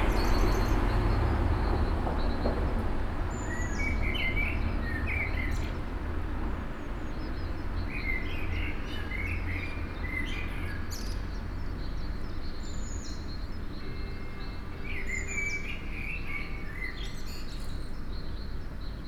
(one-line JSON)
{"title": "Lange Str., Hamm, Germany - noon bells", "date": "2020-04-07 11:57:00", "description": "two bells competing... ambience notably quieter due to stay-at-home... beyond corona, both bells happen to be in need of repair or reset...", "latitude": "51.67", "longitude": "7.80", "altitude": "65", "timezone": "Europe/Berlin"}